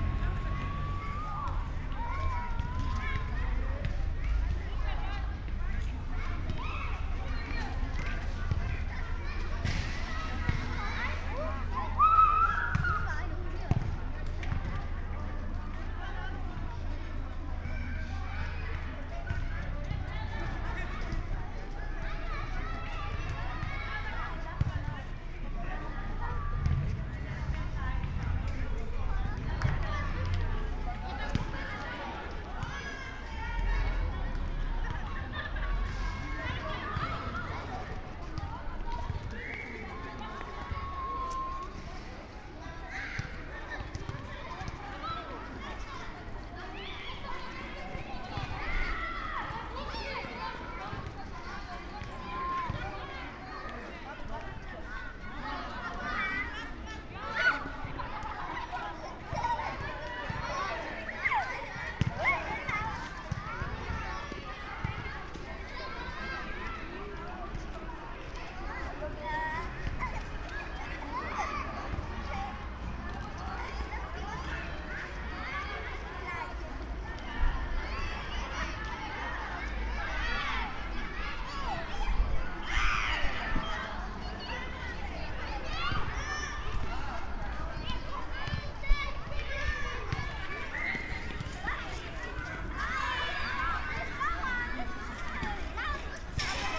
Mülheim, Köln, Deutschland - playground, evening ambience
Köln Mülheim, summer evening, playground
(Sony PCM D50, Primo EM172)
Köln, Germany, 15 August 2016, 20:20